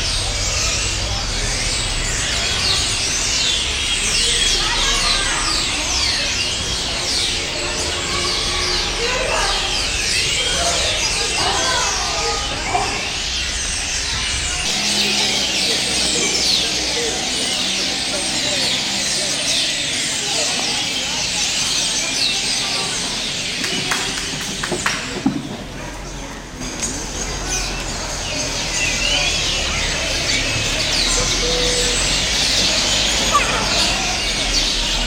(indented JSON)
{
  "title": "Plaza de Cavana, Nerja - a flock of squeaking birds",
  "date": "2007-12-04 19:21:00",
  "description": "a flock of squeaking birds",
  "latitude": "36.75",
  "longitude": "-3.88",
  "altitude": "27",
  "timezone": "Europe/Madrid"
}